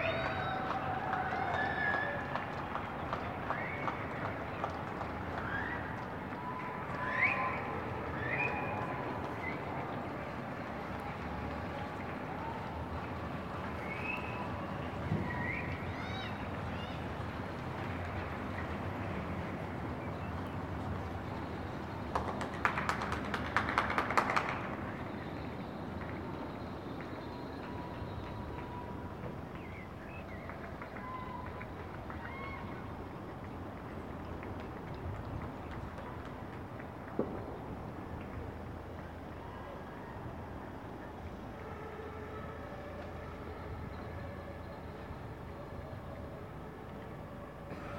First Berlin wide call (I think) to give doctors, nurses, etc. a supportive applause from everyone.
Recorded from my balcony with Sony PCM D100.
Deutschland, 21 March 2020